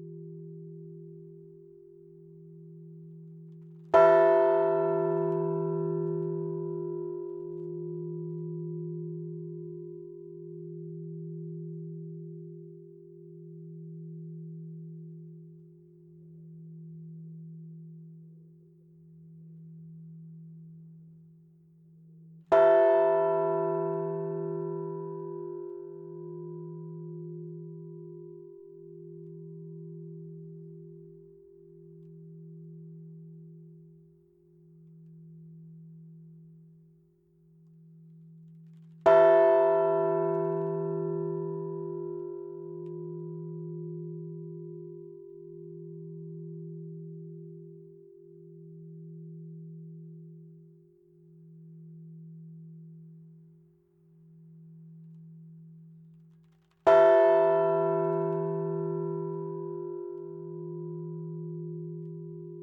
Rue Jean Jaurès, Haspres, France - Haspres - Département du Nord - église St Hugues et St Achere - Tintements.
Haspres - Département du Nord
église St Hugues et St Achere
Tintements.
France métropolitaine, France, April 2021